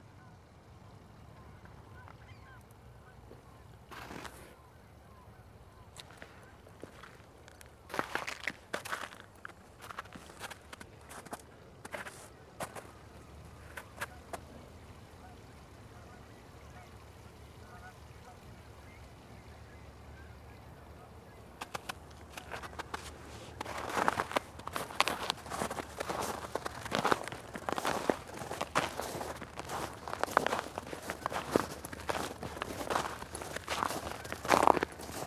Green Bay, WI, USA - Icy Fox River Trail
Sounds underfoot as we walk across melting ice and snow down the Fox River Trail. Lake Michigan gulls have come to the thawing river, looking for food. Recorded with the mighty and handy Sony PCM-D50 with built in mics.
Wisconsin, United States of America, 17 March 2013